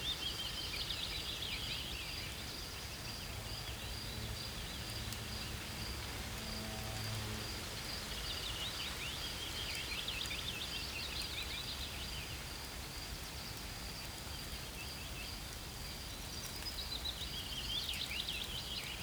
Visiting the abandoned exSoviet base of Vogelsang in 2020. My first trip outside Berlin during the Covid-19 pandemic. The train journey was better than expected. It was not so crowded and everyone wore a mask. Otherwise as normal. Sadly returning traffic in the city has brought back the pollution, so it was good to be in the forest and breath clean air again. Good weather too, pleasantly warm and a fresh breeze that constantly fluttered the leaves. Others were here too, flying drones that sound like overgrown mosquitos or just wandering.
There seemed to be a greater variety of wildlife than usual. Maybe they hav been less disturbed during the corona lockdown. For the first time I saw wild boar, a large tusked male with a much smaller female. These are big animals, but they moved away quickly after seeing us. A black woodpecker - the largest of the family - was another first. It's drumming on a dead tree was the loudest sound in the forest.